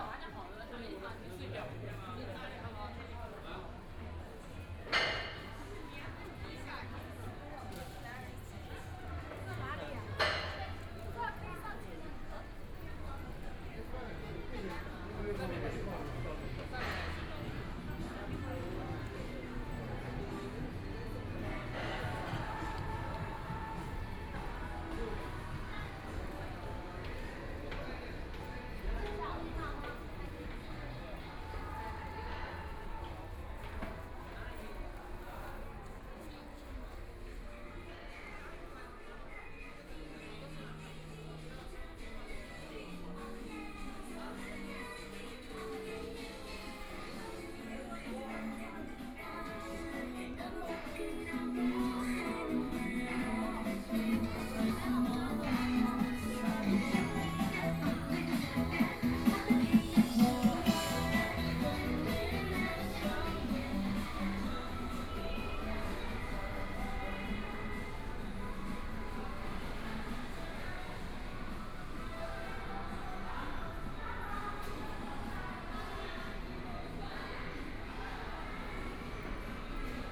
Tiantong Road, Shanghai - Shopping malls
Walking in the A small underground mall, Binaural recording, Zoom H6+ Soundman OKM II